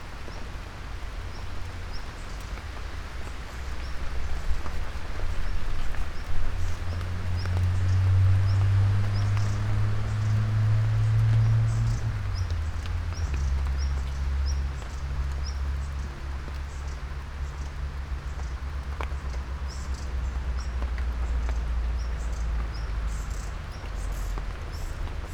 {"title": "grad Cmurek, ancient tree - rain drops on leaves", "date": "2015-06-20 15:10:00", "latitude": "46.71", "longitude": "15.79", "altitude": "302", "timezone": "Europe/Ljubljana"}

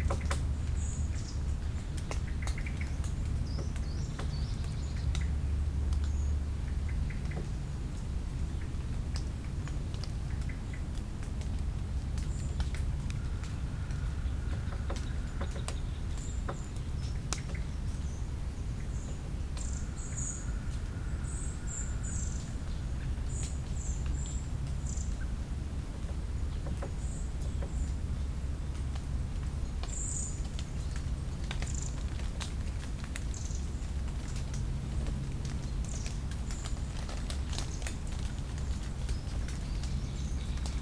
{"title": "Kloster Insel, Rheinau, Schweiz - fogdrops Rheinau O+A", "date": "2012-10-20 11:09:00", "description": "Bruce Odland and I (O+A)\nresearched the auditory qualities around Rheinau over more than a year. The resulting material served as the starting point and source material for our Rheinau Hearing View project and became part of the Rheinau Hearing View library.", "latitude": "47.64", "longitude": "8.61", "altitude": "356", "timezone": "Europe/Berlin"}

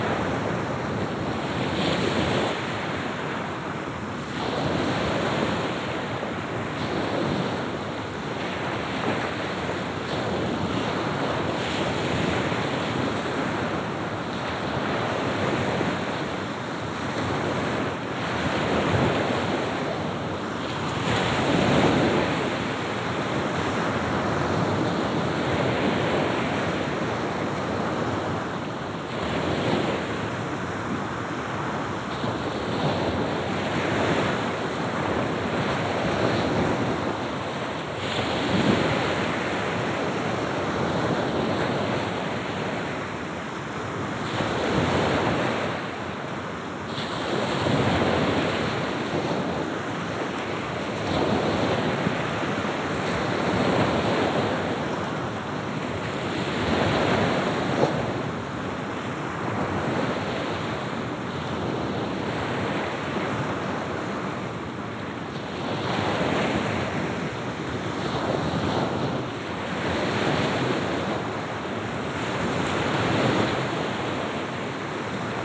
{"title": "Cape Sosnovyy Navolok. Sea waves. Russia, The White Sea - Cape Sosnovyy Navolok. Sea waves.", "date": "2015-06-21 22:40:00", "description": "Cape Sosnovyy Navolok. Sea waves.\nМыс Сосновый Наволок. Морские волны.", "latitude": "63.91", "longitude": "36.92", "timezone": "Europe/Moscow"}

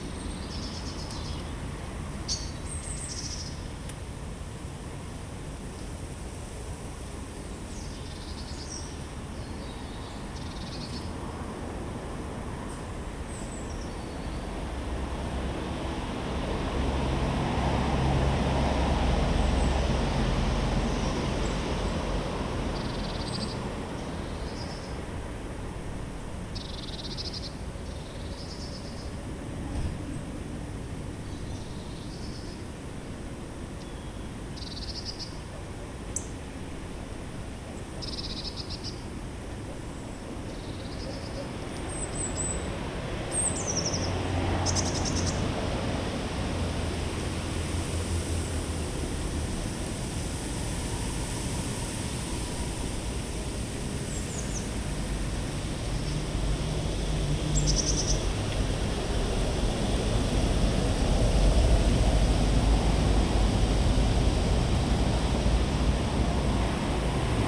{"title": "Voie Communale Port Sauvage, Saint-Clément-des-Levées, France - In a garden", "date": "2019-12-27 12:34:00", "description": "In a garden with birds and cars, binaural, Zoom H3-VR", "latitude": "47.33", "longitude": "-0.19", "altitude": "23", "timezone": "Europe/Paris"}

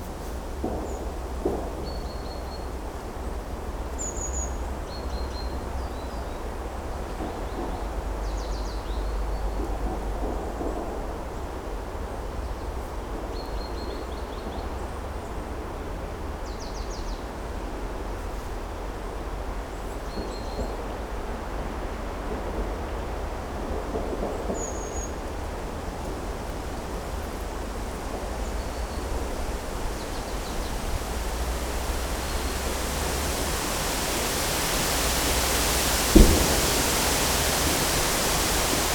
{"title": "Morasko nature reserve - in the windy forest", "date": "2018-10-24 12:34:00", "description": "sounds of a military training on a range a few kilometers away. in a windy forest. (roland r-07)", "latitude": "52.49", "longitude": "16.90", "altitude": "159", "timezone": "Europe/Warsaw"}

